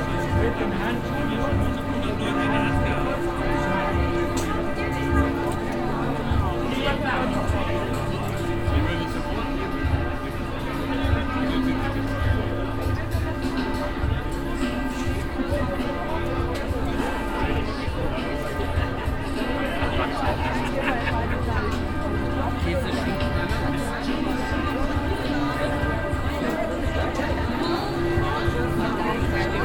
cologne, am hof, domglocken
domglocken abends reflektiert vom carlton haus inmitten des regen altstadtpublikum verkehrs
soundmap nrw - weihnachts special - der ganz normale wahnsinn
social ambiences/ listen to the people - in & outdoor nearfield recordings
23 December 2008, 17:35